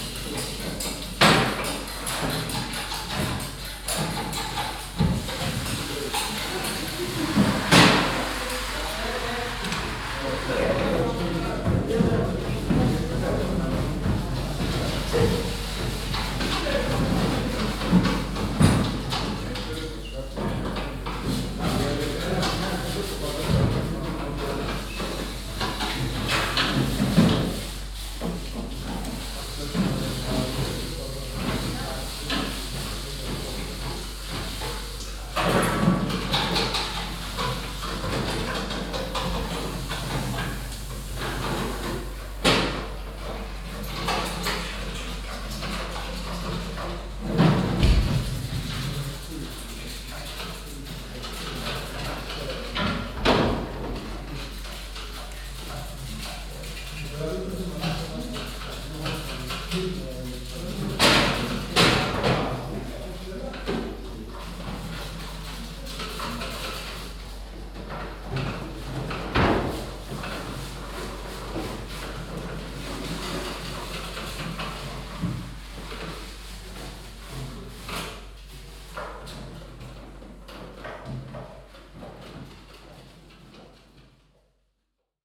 Bastendorf, Tandel, Luxemburg - Bastendorf, bio nursery
Innerhalb einer Arbeitshalle der Bio Gärtnerei "am gärtchen". Die Klänge von Menschen die Gemüse putzen und Transportboxen reinigen.
Inside a working hall of the bio nursery "am gärtchen". The sounds of people washing vegetables and cleaning transport boxes while talking.
7 August, 11:40